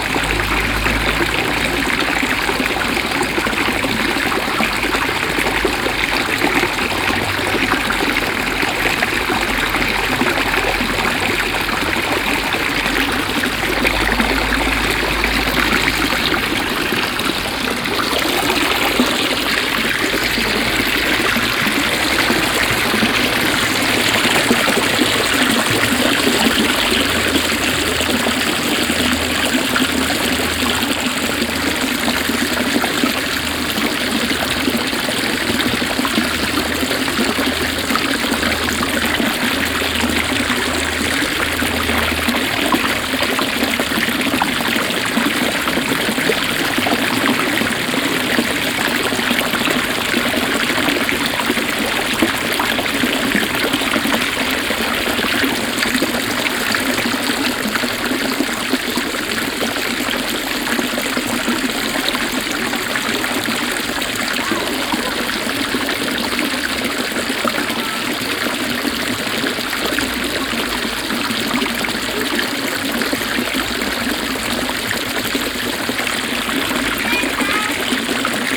Schiltach, Deutschland - Schiltach, Market Place, Fountain
At the historical market place of the town. A warm and sunny spring evening. The sound of the water being spreaded out of 4 different water arms and two kids playing at the fountain.
soundmap d - social ambiences, water sounds and topographic feld recordings
Schiltach, Germany, May 2012